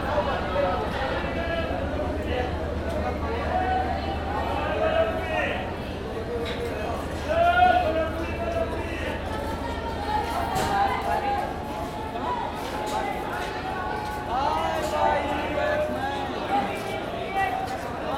Cantt, Mirpur Cantonment, Mirpur, Kanpur, Uttar Pradesh, Inde - Kampur Station
Kampur Station
Ambiance gare centrale de Kampur
Kanpur Nagar, Uttar Pradesh, India, 14 March 2003, 17:00